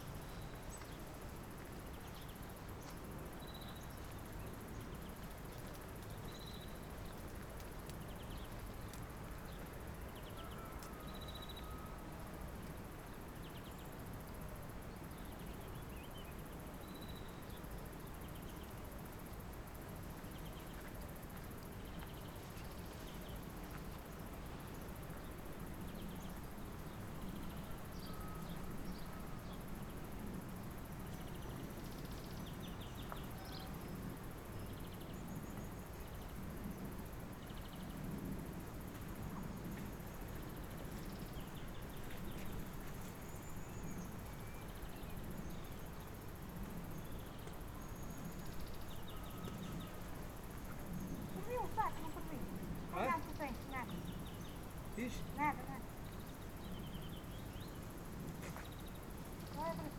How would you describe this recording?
people walking through the field bringing plastic bags to the waste container.